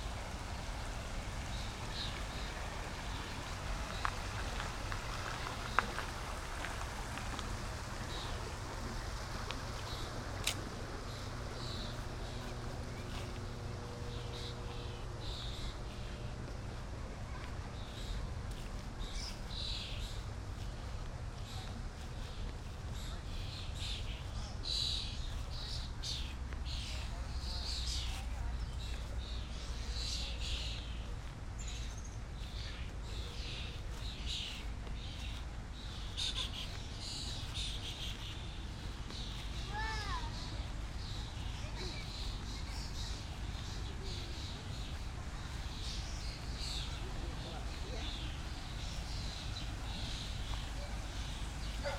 A morning stroll through the old village.
MI, USA, 27 May 2012, ~11:00